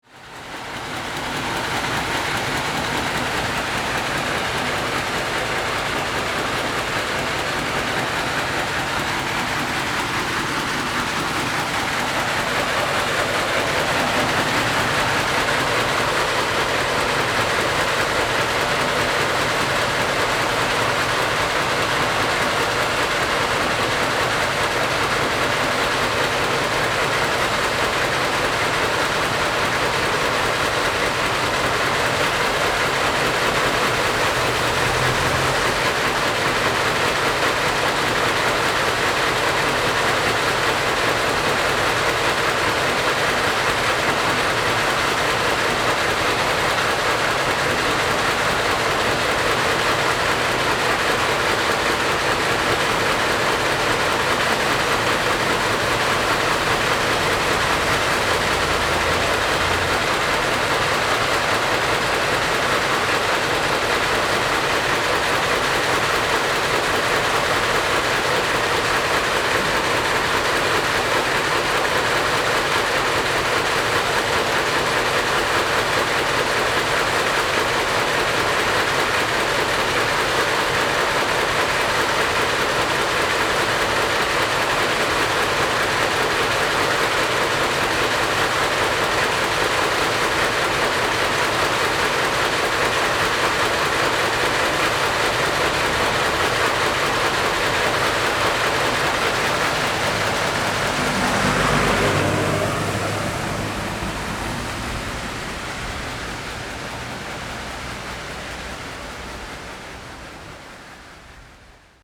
Traffic Sound, Sound from the Factory
Zoom H4n +Rode NT4
New Taipei City, Taiwan, 19 December 2011